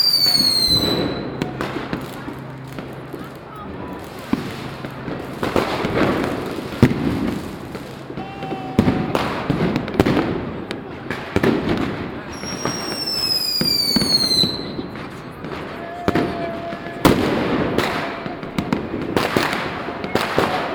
Stephansplatz, Vienna - New Year 2009 (schuettelgrat)
New Years Celebrations at Viennas Stephansplatz, Binaural Recording, Fireworks, People and the bell of St. Stephens Cathedral